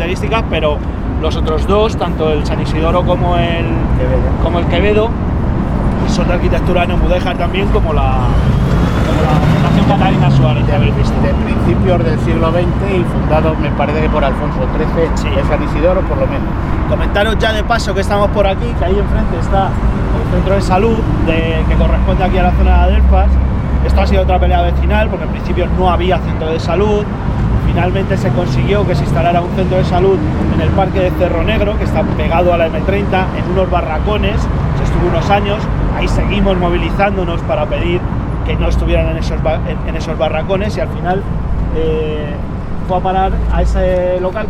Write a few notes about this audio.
Pacífico Puente Abierto - Transecto - CEIP Calvo Sotelo